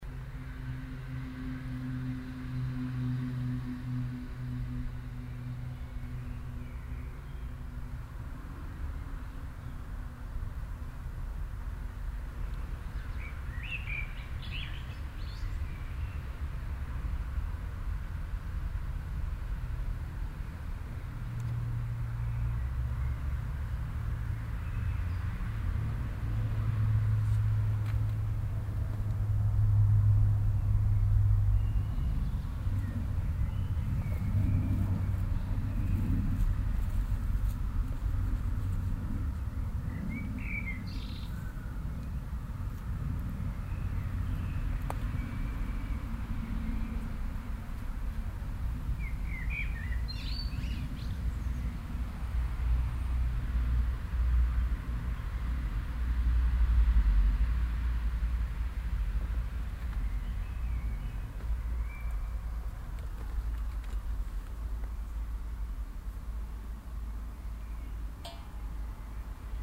{
  "title": "refrath, lustheide, garten, feuerschale",
  "description": "soundmap: refrath/ nrw\nsonntags nachmittags garten atmosphäre, verkehrsresonanzen, vögel, steine fallen in die wasser gefüllte feuerschale\nproject: social ambiences/ listen to the people - in & outdoor nearfield recordings",
  "latitude": "50.95",
  "longitude": "7.11",
  "altitude": "68",
  "timezone": "GMT+1"
}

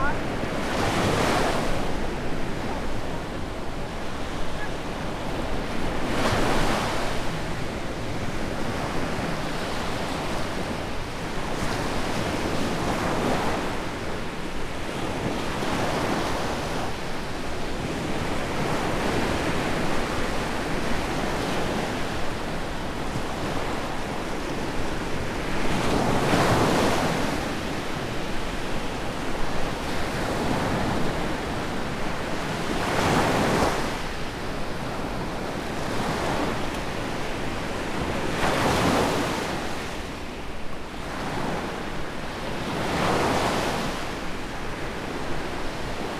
{
  "title": "Mamaia Beach, Constanța, Romania - Nightime Sea Sounds",
  "date": "2019-06-23 22:32:00",
  "description": "A night recording on a beach in Mamaia, Romania. Being a popular destination for tourists, beaches in Mamaia are usually quite crowded and consequently the bars play music at all times. It is difficult to find a spot where you can just listen to the sea. There are some sweet spots in between terraces, but even there the bass travels and is present. This is the rumble that you hear in the lows, it is of a musical origin and not microphone issues. With EQ it can obviously be cleaned but this creates an impression of what could be and not what it actually is. Recorded on a Zoom F8 using a Superlux S502 ORTF Stereo Mic.",
  "latitude": "44.25",
  "longitude": "28.62",
  "altitude": "1",
  "timezone": "Europe/Bucharest"
}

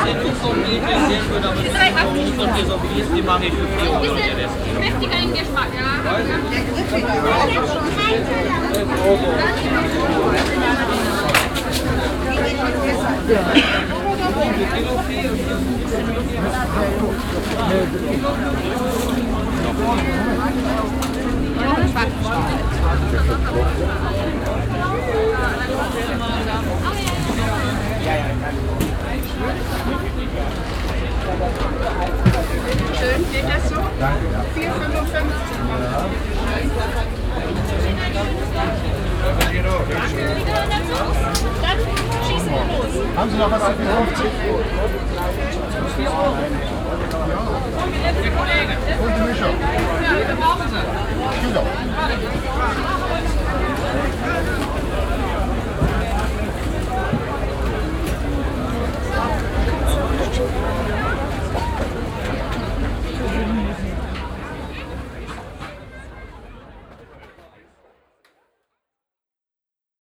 {
  "title": "Rüttenscheid, Essen, Deutschland - essen, rüttenscheider markt, saturday market",
  "date": "2014-04-26 13:15:00",
  "description": "Am Rüttenscheider Markt zum Markt am Samstag. Die Klänge der Stimmen, Plastiktüten, Gespräche zwischen Kunden und Verkäufer. Im Hintergrund Strassenverkehr.\nAt the saturday market place. The sounds of voices - customers and sellers conversation, plastic bags. In The background street traffic .\nProjekt - Stadtklang//: Hörorte - topographic field recordings and social ambiences",
  "latitude": "51.44",
  "longitude": "7.01",
  "altitude": "119",
  "timezone": "Europe/Berlin"
}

